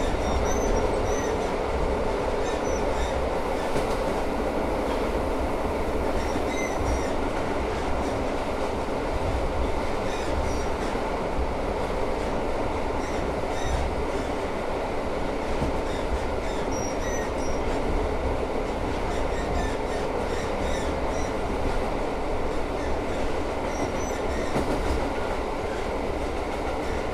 Recording of a train from the inside with recorder placed on a shelf.
Recorded with UNI mics of Tascam DR100mk3